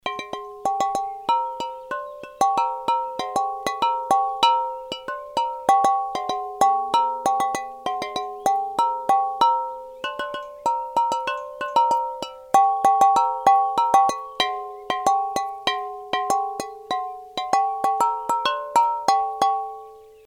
hoscheid, sound object, lithophon
Aside of the walkway nearby a small stream on the Hoscheid Klangwanderweg - sentier sonore, is this sound object by Michael Bradke entitled Lithophon.
Its a group of stone plates framed in a steel construction, that can be played with rubber ball sticks.
Recording no. 1
more informations about the Hoscheid Klangwanderweg can be found here:
Hoscheid, Klangobjekt, Lithophon
Abseits vom Weg neben einem kleinen Fluss auf dem Klangwanderweg von Hoscheid steht dieses Klangobjekt von Michael Bradke mit dem Titel Lithophon. Es ist eine Gruppe von Steinplatten, umrahmt von einer Stahlkonstruktion, die mit Gummistöcken gespielt werden. Aufnahme Nr. 1.
Mehr Informationen über den Klangwanderweg von Hoscheid finden Sie unter:
Hoscheid, élément sonore, lithophone
Un peu en retrait du chemin, à proximité d’un petit ruisseau sur le Sentier Sonore de Hoscheid, se trouve un objet acoustique de Michael Bradke intitulé le Lithophone.